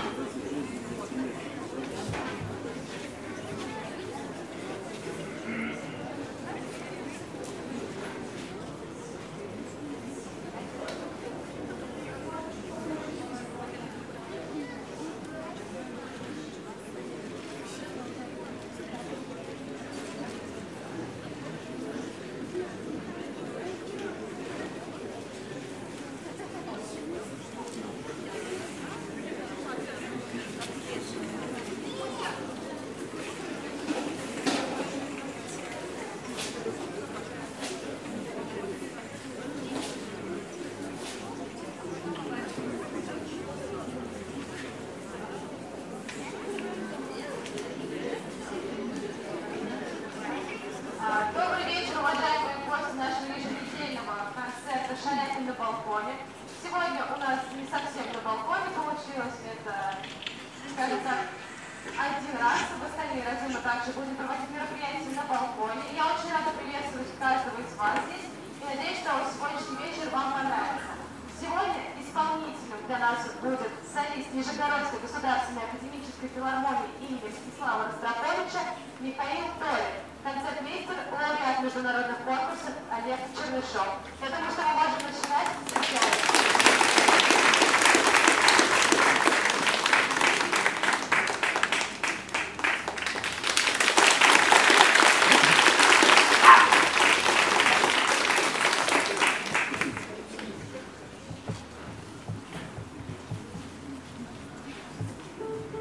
ул. Короленко, Нижний Новгород, Нижегородская обл., Россия - сhalyapin
this sound was recorded by members of the Animation Noise Lab by zoom h4n
street concert "chaliapin on the balcony"
Приволжский федеральный округ, Россия, 22 July 2022, 19:05